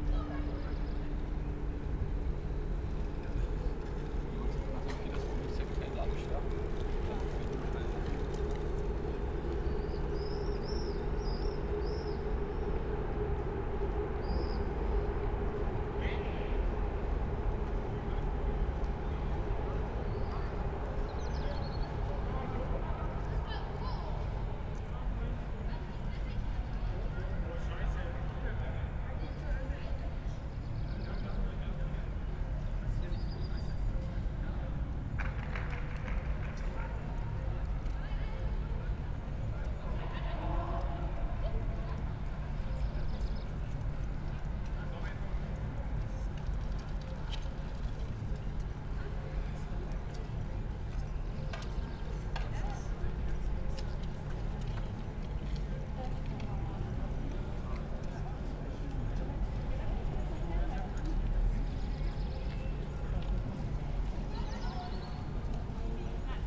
Berlin, Schiffbauerdamm, Government quarter, between buildings, river Spree, Sunday evening after the relaxation of Corona lockdown rules
(SD702, DPA4060)
Deutschland, 23 May 2021